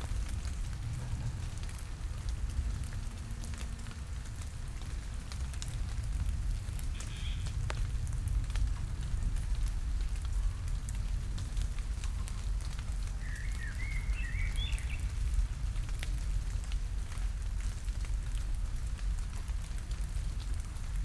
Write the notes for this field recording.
playround near old school, under the big oak tree ("Hitler-Eiche"), rain drops falling from the leaves, an aircraft, distant voices, (Sony PCM D50, DPA4060)